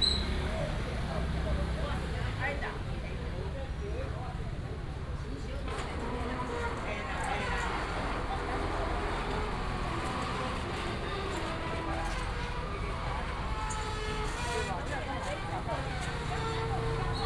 In the square of the temple, Traffic sound, Chatting between the vendors and the people